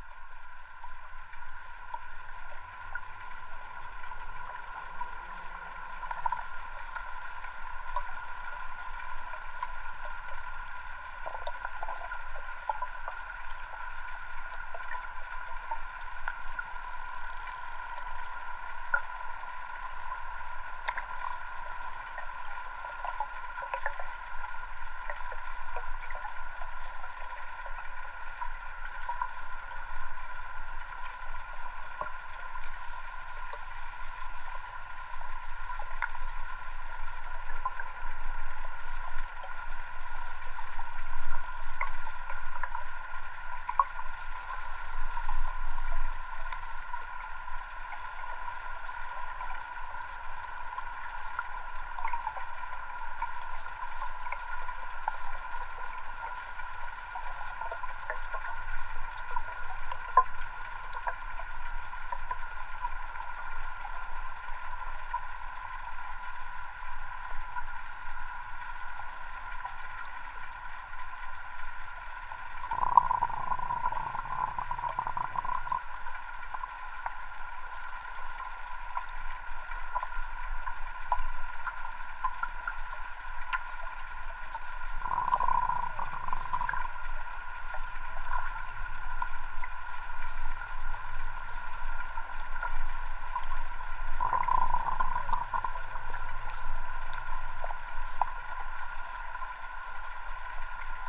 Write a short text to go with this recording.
Hydrophone recording of Riga canal.